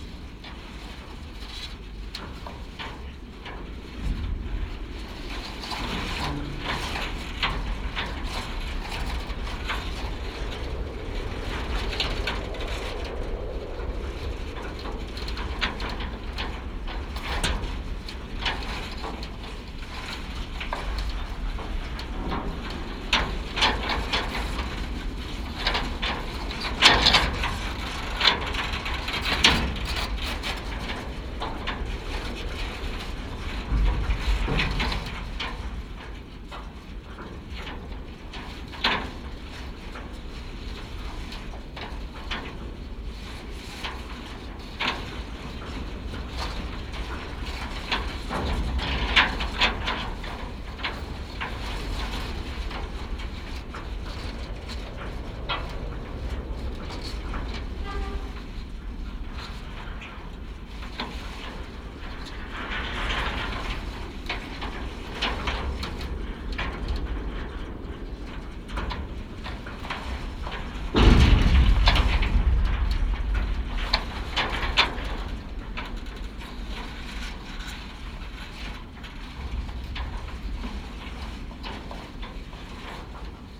4 channel contact microphone recording of a soccer field safety net. Irregular impact of the wind moves the net and it's support poles, resulting in rustling and metallic sounds. Recorded with ZOOM H5.
22 April, 16:20